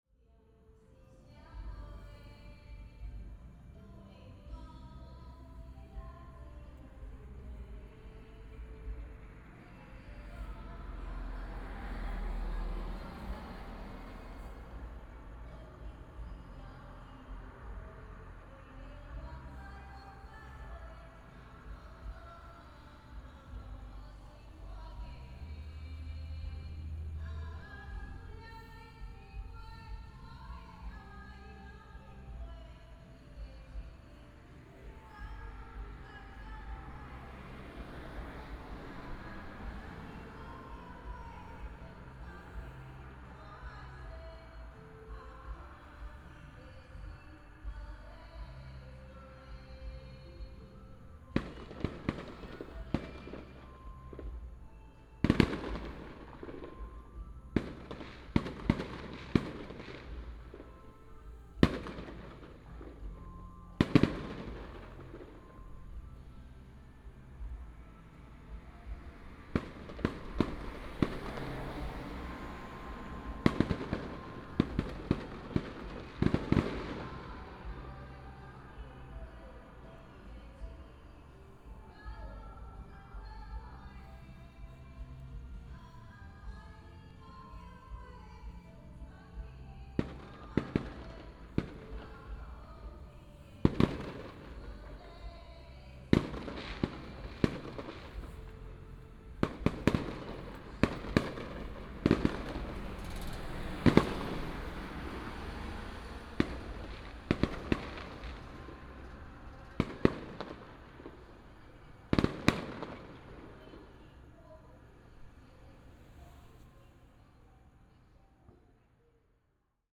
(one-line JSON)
{"title": "Niaosong Rd., Shuilin Township, Yunlin County - Small village night", "date": "2018-05-07 20:59:00", "description": "Small village night, Traffic sound, temple fair, sound of fireworks\nBinaural recordings, Sony PCM D100+ Soundman OKM II", "latitude": "23.51", "longitude": "120.23", "altitude": "6", "timezone": "Asia/Taipei"}